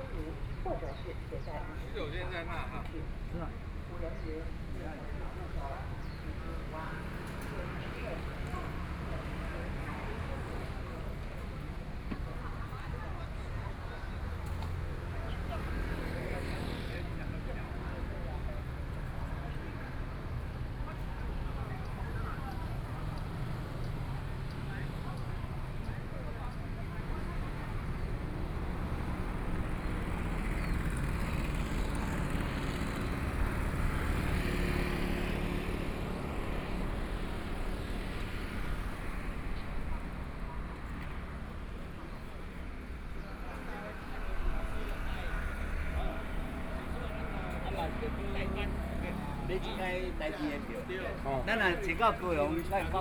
walking in the Dragon and Tiger Pagodas, Chinese tourists, Traffic Sound, The weather is very hot
Kaohsiung City, Taiwan